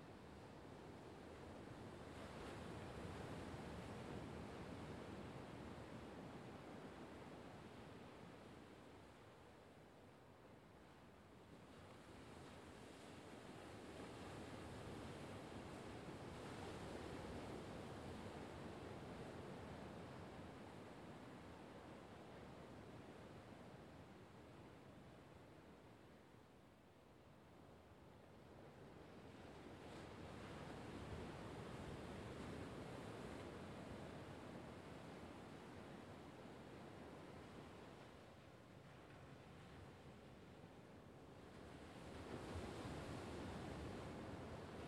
Santa Barbara, CA 93106美国 - The sound of the sea waves
I recorded the sound of the sea waves during afternoon. There was no high winds during that time. I used the Tascam DR-40X to record the sound.
California, USA, 18 October 2019, 14:30